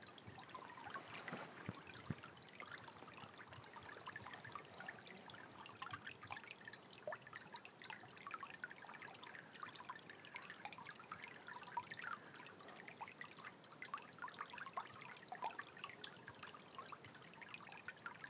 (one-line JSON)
{"title": "An Sanctoir, Bawnaknockane, Ballydehob, Co. Cork, Ireland - Soundwalk at An Sanctóir on World Listening Day 2021", "date": "2021-07-18 14:30:00", "description": "To celebrate World Listening Day, an annual event since 2010, a soundwalk was organized in the secluded nature reserve at An Sanctóir in the heart of West Cork. Seven participants took their ears for a walk and enjoyed a beautiful afternoon.", "latitude": "51.57", "longitude": "-9.45", "altitude": "23", "timezone": "Europe/Dublin"}